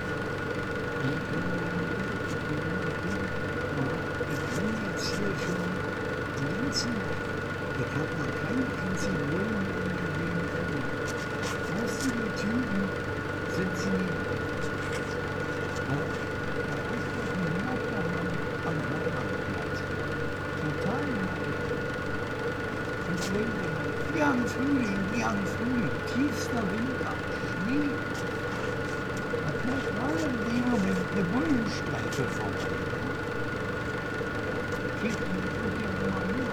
berlin: friedelstraße - the city, the country & me: man looking for his dog
sewer works site early in the morning, water pump, a man comes around looking for his dog and bums a cigarette
the city, the country & me: february 6, 2014
Berlin, Germany, 6 February 2014